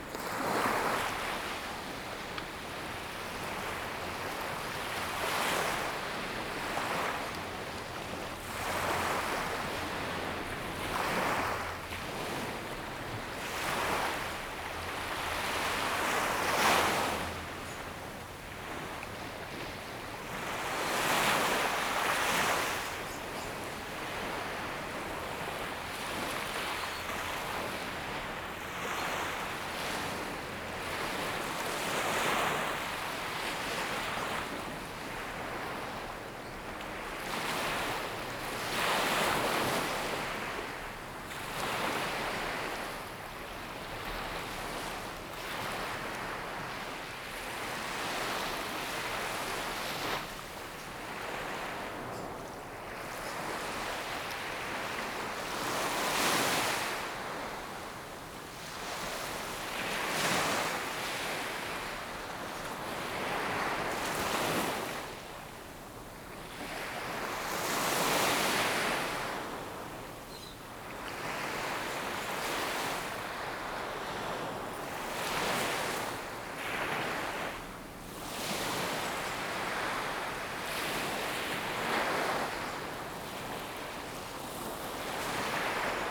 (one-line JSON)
{"title": "三芝區後厝里, New Taipei City, Taiwan - Small beach", "date": "2016-04-15 08:15:00", "description": "Sound of the waves, Small beach\nZoom H2n MS+H6 XY", "latitude": "25.26", "longitude": "121.47", "altitude": "7", "timezone": "Asia/Taipei"}